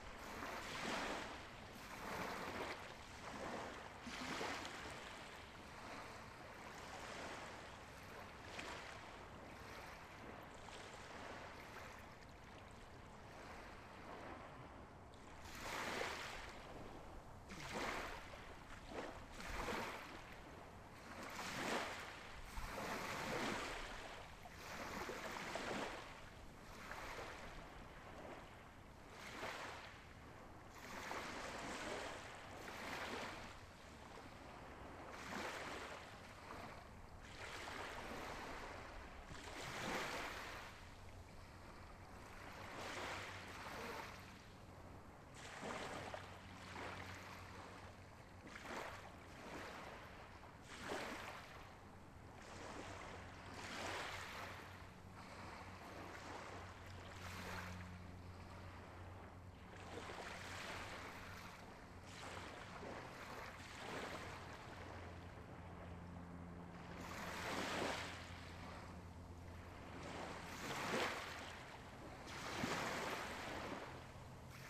Point Molate beach, now closed to the public, pretty spot with nice views of Richmond bridge, very polluted .. I am cleaning this beach for few months now and it really made me think of importance of clean environment..... I like this recording of waves for changes in their tempo and made me think of making series of such long recordings of waves hitting a shore... Please, help to clean our planet....